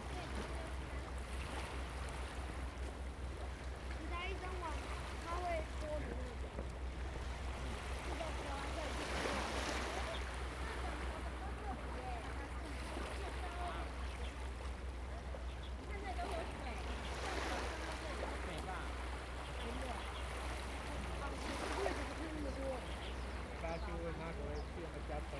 杉福漁港, Liuqiu Township - Small beach
Birds singing, Small beach, The sound of waves and tides
Zoom H2n MS +XY
Pingtung County, Taiwan, 1 November 2014